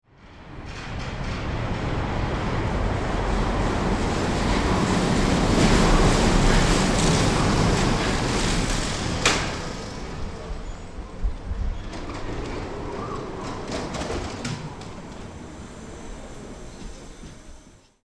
Die Wuppertaler Schwebebahn (offizieller Name Einschienige Hängebahn System Eugen Langen) ist ein um 1900 von MAN konstruiertes und erbautes, 1901 freigegebenes und bis heute in Betrieb stehendes Nahverkehrssystem im Stadtbereich von Wuppertal. Das System war ursprünglich auch für viele andere Städte geplant, so existierten beispielsweise Pläne für Schwebebahnen in Hamburg, Berlin, London und den deutschen Kolonialgebieten.